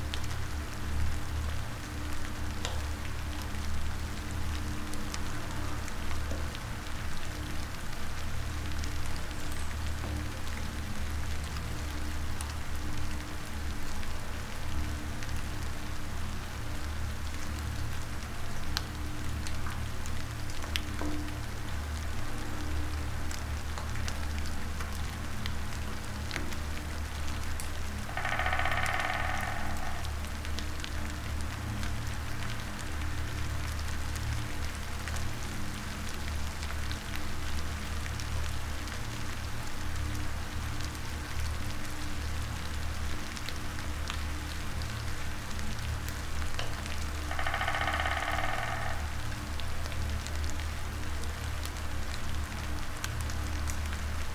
Utena, Lithuania, in the well
small omni microphones in the well. usual drone, rain drops and black woodpecker in the distance.